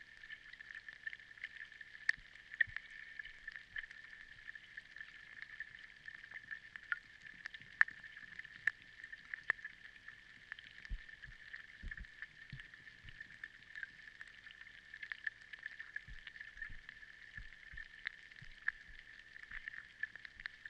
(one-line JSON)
{"title": "Bistrampolis, Lithuania, underwater", "date": "2016-07-31 17:30:00", "description": "hydrophone recording in the pond", "latitude": "55.60", "longitude": "24.36", "altitude": "66", "timezone": "Europe/Vilnius"}